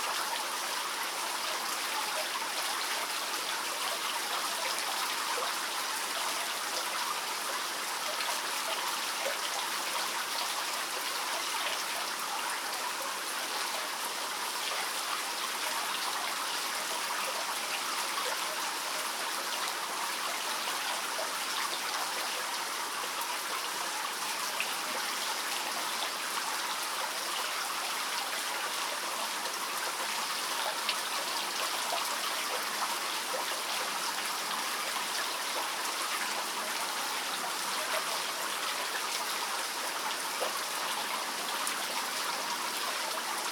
Birštonas, Lithuania, inside mineral water evaporation tower
Listening to the dripping walls of mineral water evaporation tower. Night time - no constantly talking crowd inside...
Kauno apskritis, Lietuva, 19 June 2022